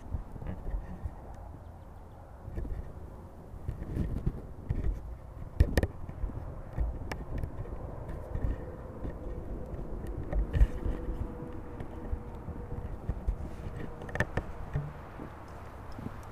two men from the road work crew are talking about the temporary toilets at the job site, we're about halfway then... soon we dip off the road and through the trees, out into the hot summer sun, across the tracks and find a nice shady spot under a big ponderosa to hang out for a bit...